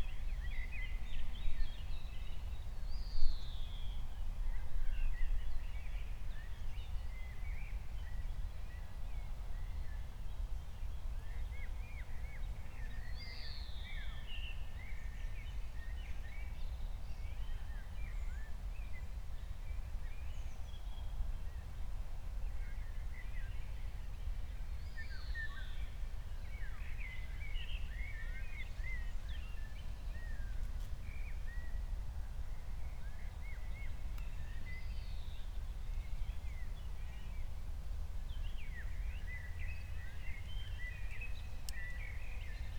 Berlin, Buch, Mittelbruch / Torfstich - wetland, nature reserve
18:00 Berlin, Buch, Mittelbruch / Torfstich 1